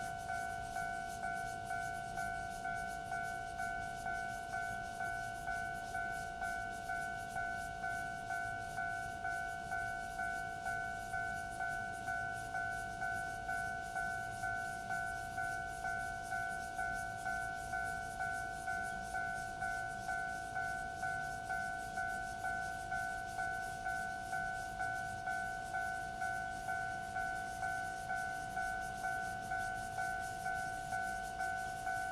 Next to the tracks, Cicada cry, Traffic sound, The train runs through
Zoom H2n MS+XY